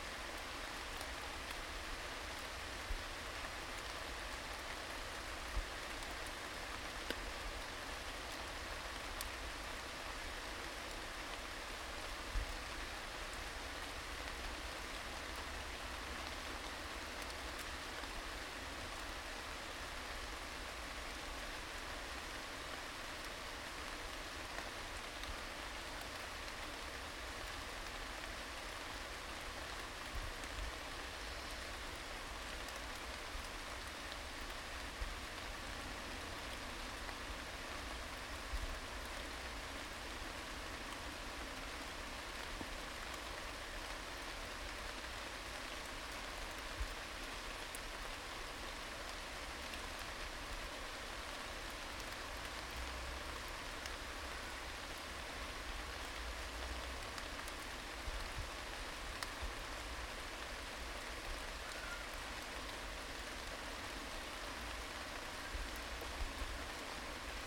Heaton Park, Ouseburn Rd, Newcastle upon Tyne, UK - Beech Trees in Heaton Park
Walking Festival of Sound
13 October 2019
Beech Trees, rain. Walking underneath trees. Inside a hollow tree. aeroplane overhead.
North East England, England, United Kingdom